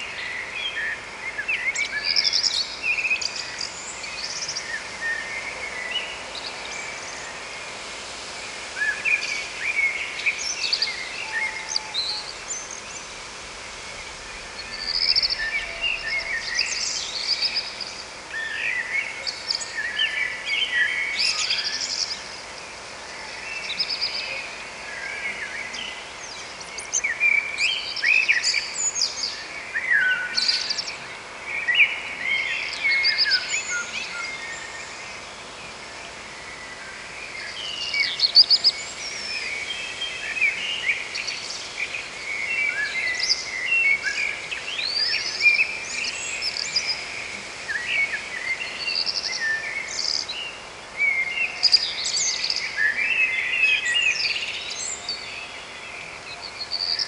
Grauwe Broedersstraat, Diksmuide, Belgium - Birds In The Fields
Recorded onto a Marantz PMD661 with a stereo pair of DPA 4060s